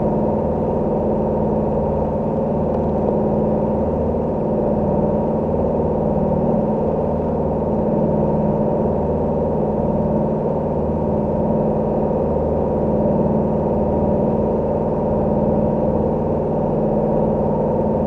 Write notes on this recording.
Motor sound from a taxi boat. Recorded with a contact mic.